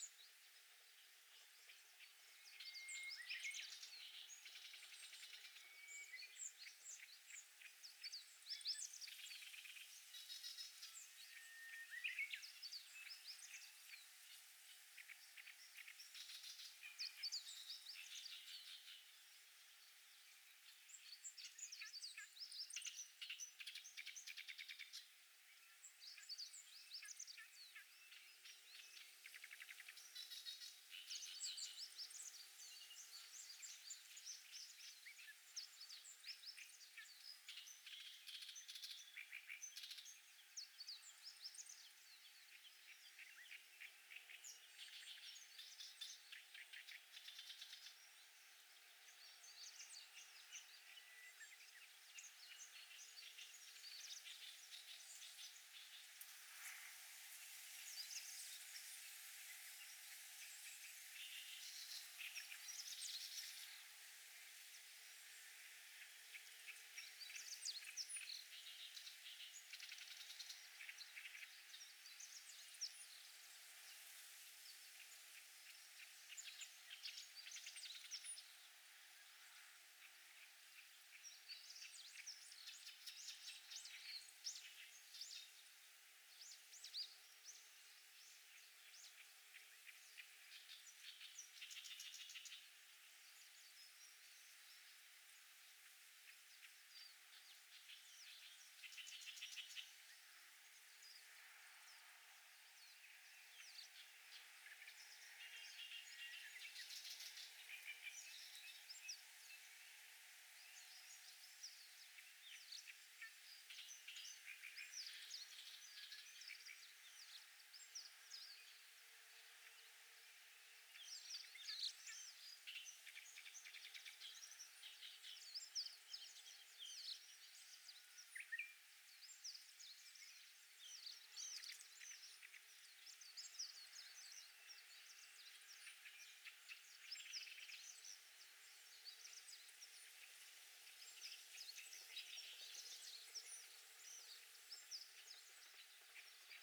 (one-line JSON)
{"title": "Tanushimarumachi Yahata, Kurume, Fukuoka, Japan - Reed Warblers on the Flood Plains of Chikugo Gawa", "date": "2020-05-20 13:14:00", "description": "Migratory Reed Warblers from South East Asia or Northern India.", "latitude": "33.36", "longitude": "130.66", "altitude": "9", "timezone": "Asia/Tokyo"}